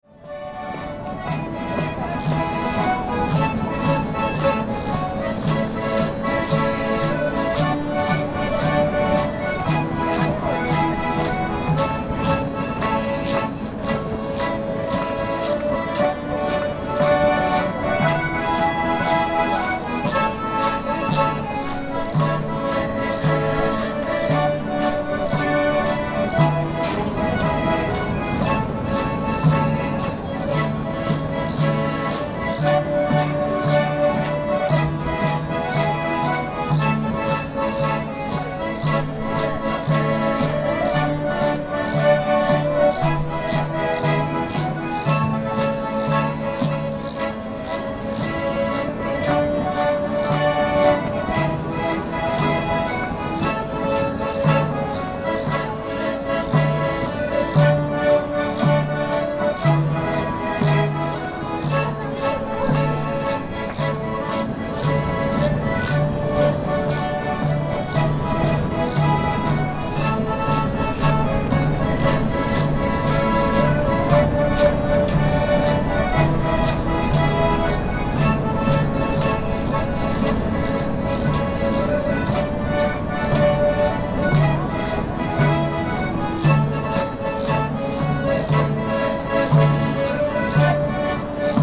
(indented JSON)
{
  "title": "Lithuania, Biliakiemis, at village feast",
  "date": "2012-08-18 16:20:00",
  "description": "some village's celebration. the sound after the music ends is of a big gas burner - some kind of a \"holy fire\"",
  "latitude": "55.46",
  "longitude": "25.68",
  "altitude": "168",
  "timezone": "Europe/Vilnius"
}